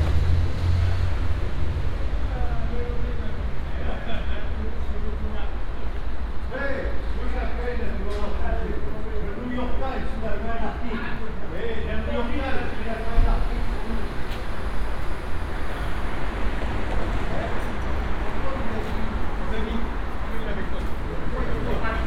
Boulevard Garibaldi, Paris, France - (358 BI) Soundwalk below metro line
Binaural recording of a walk below a metro line on a Boulevard Garibaldi.
Recorded with Soundman OKM on Sony PCM D100